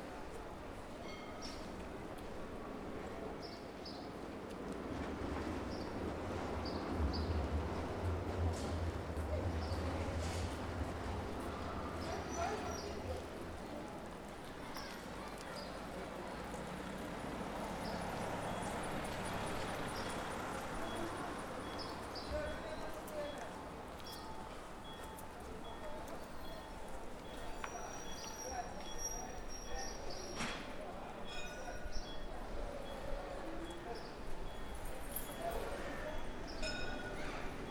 This recording is one of a series of recording mapping the changing soundscape of Saint-Denis (Recorded with the internal microphones of a Tascam DR-40).
27 May 2019, 11:25